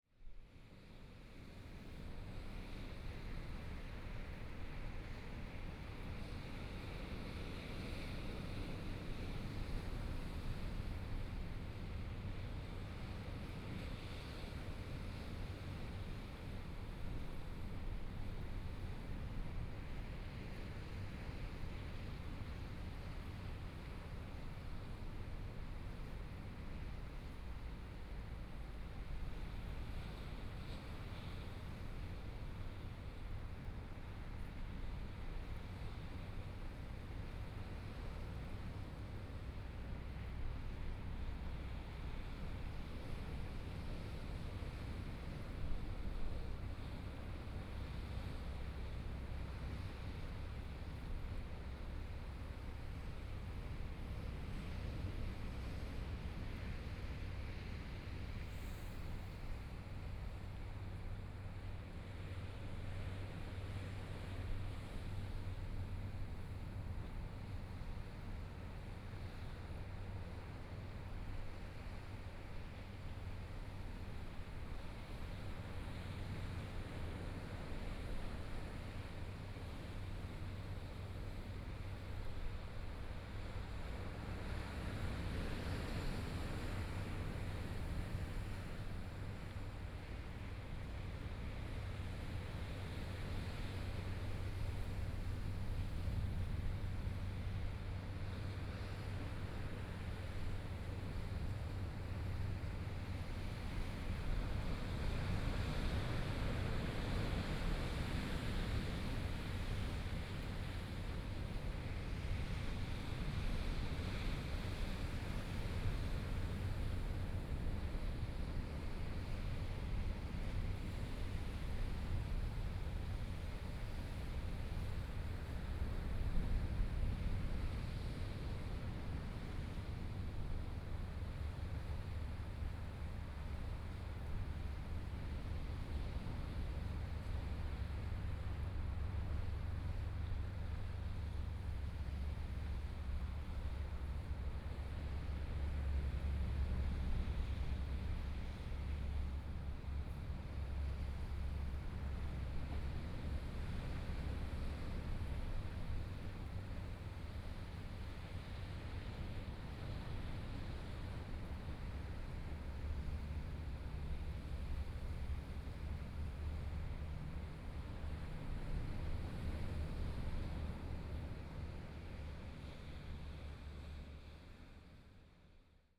Hualien City, Taiwan - Sound of the waves
Sound of the waves, Binaural recordings, Sony PCM D50+ Soundman OKM II
Hualien County, Taiwan